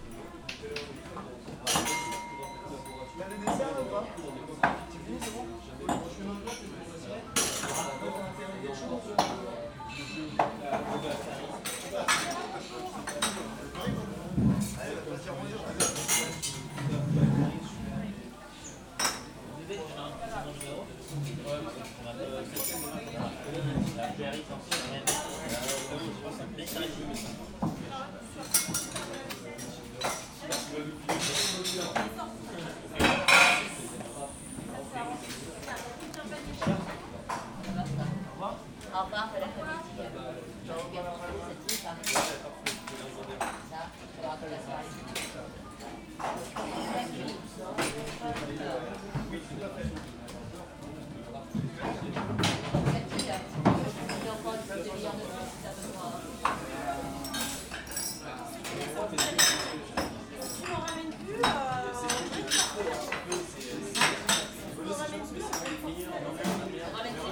On the last day of the year 2018, people take fun. We are here in a crowded bar. Chartres is a discreet city. People speak softly.
Chartres, France, 31 December 2018